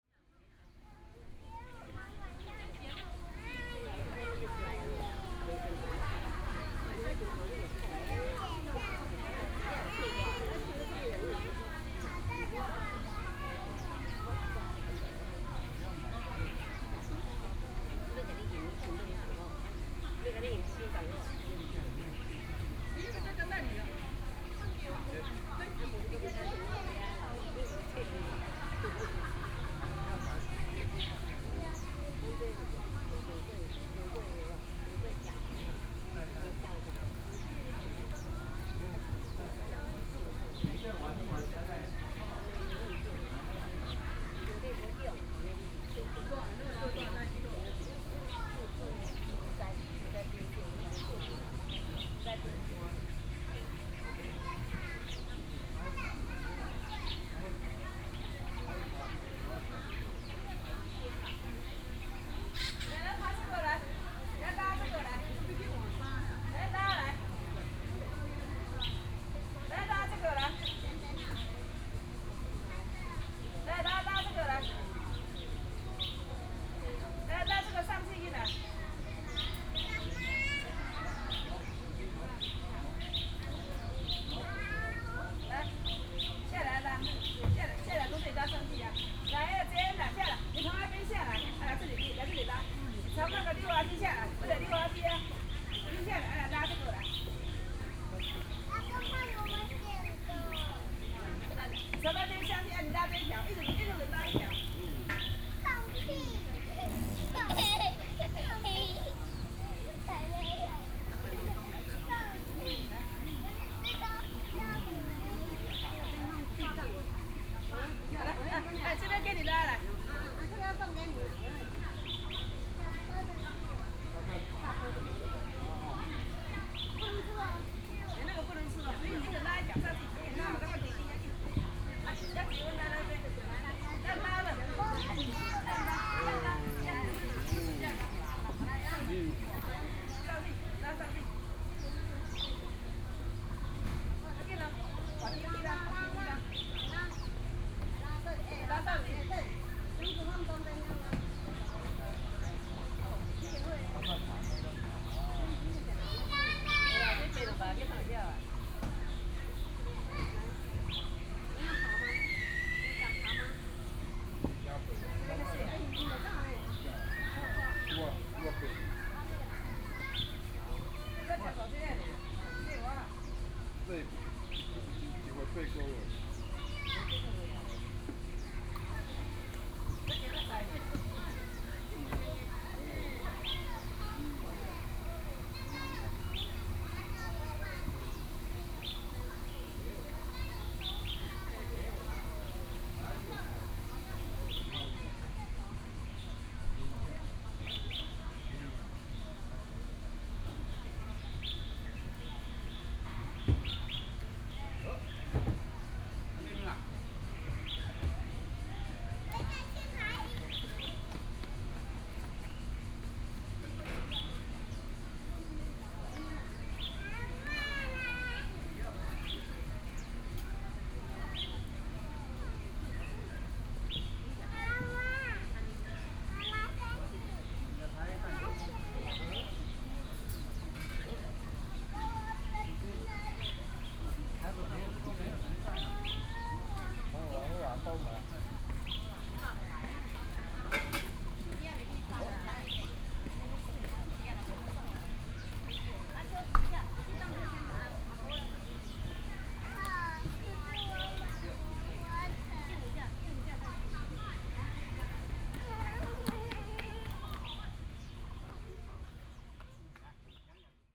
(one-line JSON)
{"title": "HutoushanPark - Child", "date": "2013-09-11 09:32:00", "description": "The elderly and children's play area in the park, Sony PCM D50 + Soundman OKM II", "latitude": "25.00", "longitude": "121.33", "altitude": "122", "timezone": "Asia/Taipei"}